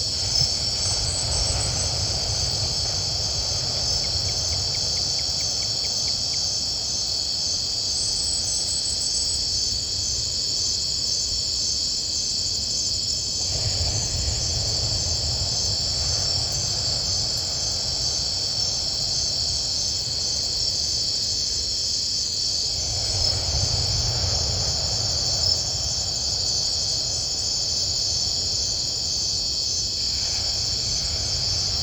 Laguna Chacahua - Night in Chacahua, crickets and seawaves
During the night in the Laguna of Chacahua. Some crickets are singing, sound of the pacific ocean in background.
Recorded by a binaural of 2 Sanken Cos11D on an Olympus LS5